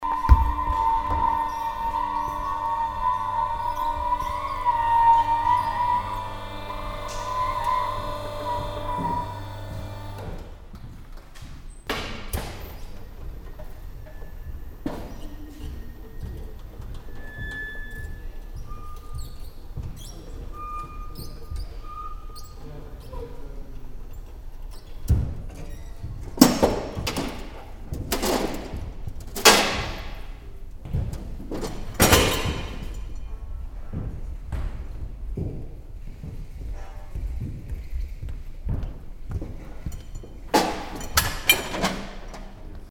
Düsseldorf, tanzhaus nrw, small stage, setup
Inside the tanzhaus nrw on the small stage. The sound of a movable, motor driven platform on the small stage while a stage set up.
soundmap nrw - social ambiences and topographic field recordings